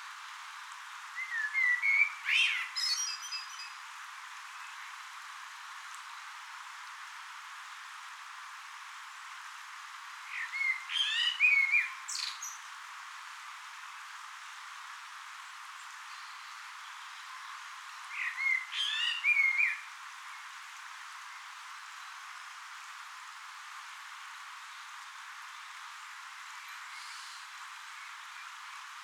Walking Holme Foundry
In the river at the side of the foundry.